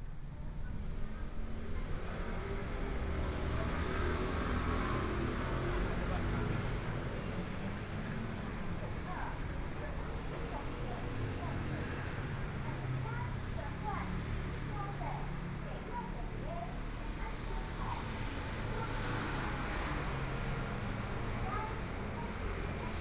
walking on the Road, Walking across the different streets, Environmental sounds, Traffic Sound, Motorcycle Sound, Pedestrian, Clammy cloudy, Binaural recordings, Zoom H4n+ Soundman OKM II
Zhongshan District, Taipei City, Taiwan, 10 February 2014